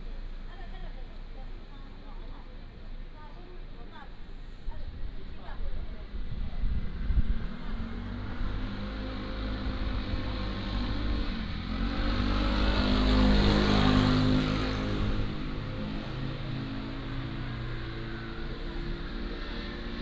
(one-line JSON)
{"title": "隘門村, Huxi Township - Ring road", "date": "2014-10-23 17:59:00", "description": "Ring road, Traffic Sound", "latitude": "23.56", "longitude": "119.64", "altitude": "26", "timezone": "Asia/Taipei"}